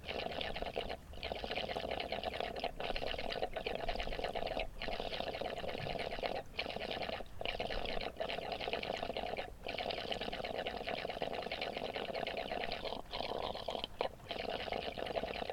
A gurgling irrigation pipe on a hillside in rural Japan.